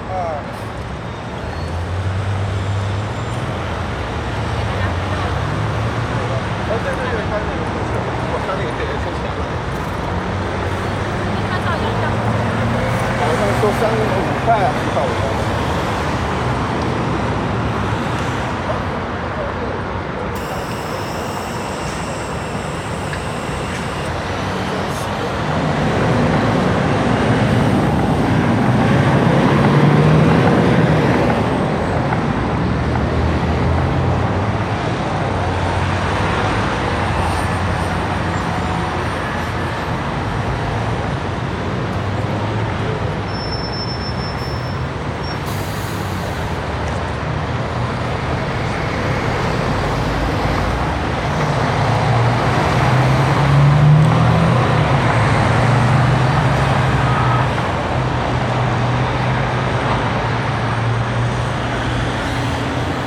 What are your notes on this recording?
Standort: Potsdamer Platz (historische Kreuzung Potsdamer Straße Ecke Ebertstraße). Blick Richtung Nord. Kurzbeschreibung: Dichter Verkehr, Touristen, Kinder auf dem Schulausflug. Field Recording für die Publikation von Gerhard Paul, Ralph Schock (Hg.) (2013): Sound des Jahrhunderts. Geräusche, Töne, Stimmen - 1889 bis heute (Buch, DVD). Bonn: Bundeszentrale für politische Bildung. ISBN: 978-3-8389-7096-7